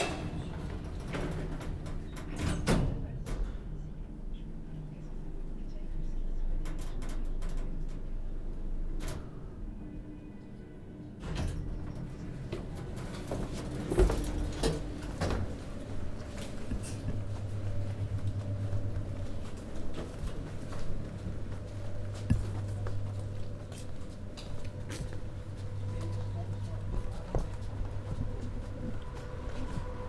sortie parking opéra, Lyon, France - On va où là
Lyon sortie du parking de l'Opéra par l'ascenseur direction place Pradel. Je viens de récupérer mon DAT qui était en réparation, 2 micros shure BG 4.0 dans un bloc de mousse avec poignée spéciale enregistrement de la marche, enregistreur DAP1 Tascam. Extrait d'un CDR gravé en 2003.
30 September, ~12:00